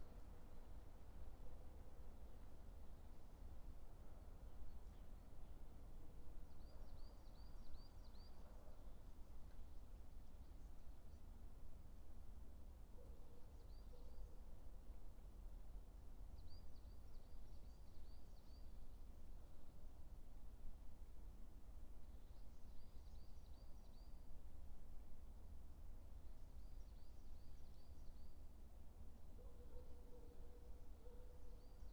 dale, Piramida, Slovenia - afternoon quietness
two men approaching slowly from a far, birds, small sounds
Vzhodna Slovenija, Slovenija, 8 April 2013, 17:11